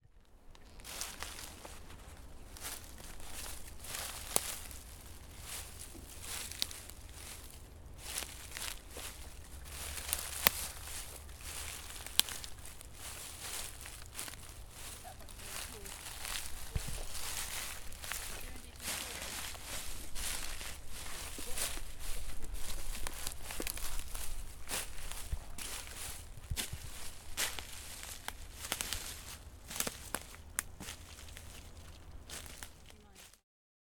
Feock, Cornwall, UK - Trelissick - Walking on Leaves
Binaural in ear microphone recording of walking on leaves and twigs by Falmouth university students as part of the Phonographies module